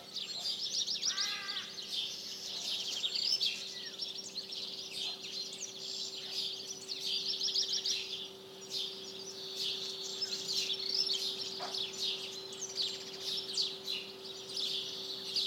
Dawn chorus in Cantanhede, Portugal.